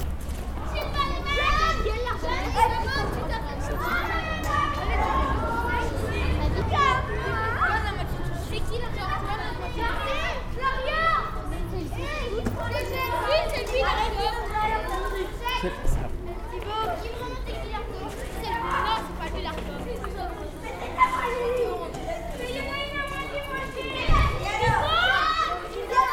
Court-St.-Étienne, Belgique - La cour de récréation
Children playing in a school. It's the "college St-Etienne" and they are playing something like football.